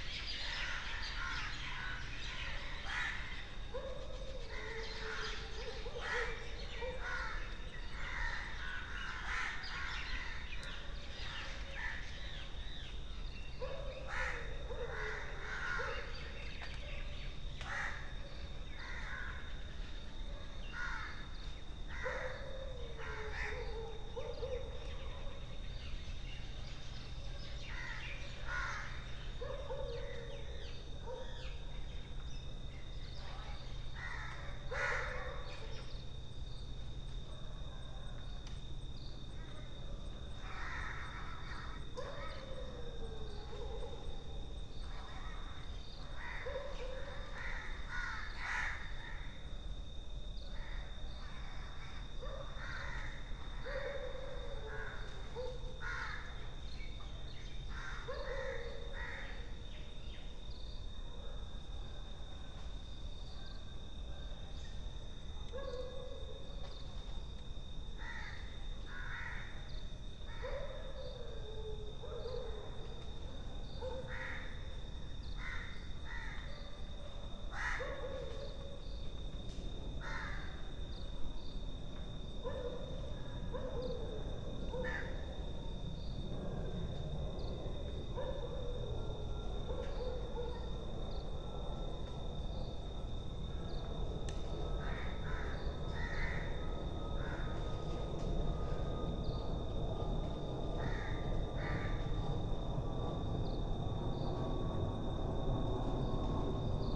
00:00 Film and Television Institute, Pune, India - back garden ambience
operating artist: Sukanta Majumdar
February 2022